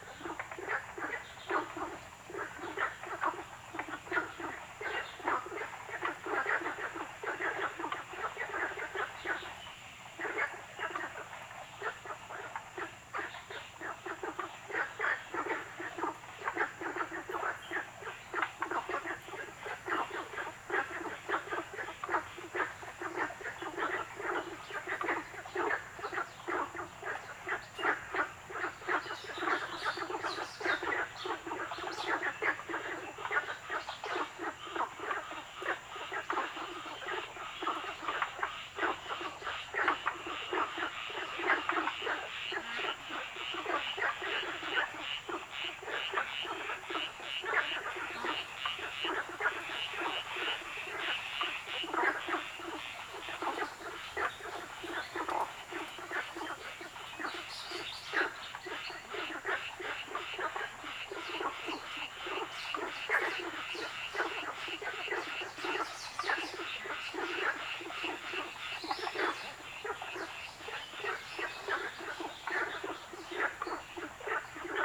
草湳, 桃米里 Puli Township - Ecological pool
Frogs chirping, Birds called
Zoom H2n MS+ XY